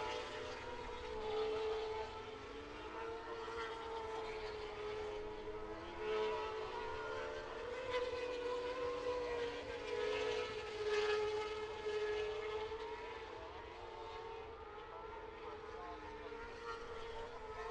Silverstone, UK - british motorcycle grand prix 2016 ... moto two ...
moto two free practice two ... Maggotts ... Silverstone ... open lavalier mics on T bar strapped to sandwich box on collapsible chair ... windy grey afternoon ... rain stopped play ...